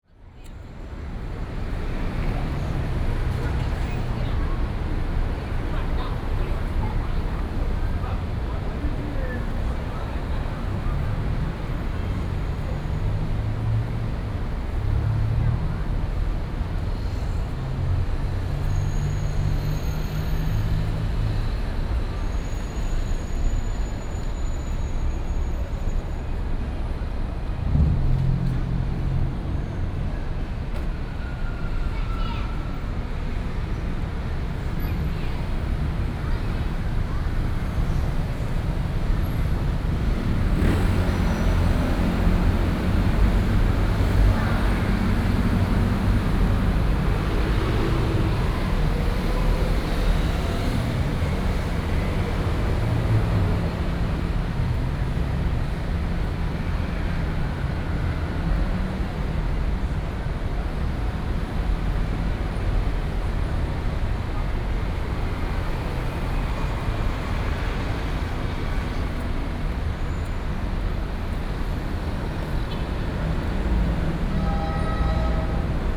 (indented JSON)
{
  "title": "Sec., Beiyi Rd., Xindian Dist., New Taipei City - traffic sound",
  "date": "2015-07-25 17:55:00",
  "description": "The above is an elevated rapid road, traffic sound",
  "latitude": "24.96",
  "longitude": "121.54",
  "altitude": "27",
  "timezone": "Asia/Taipei"
}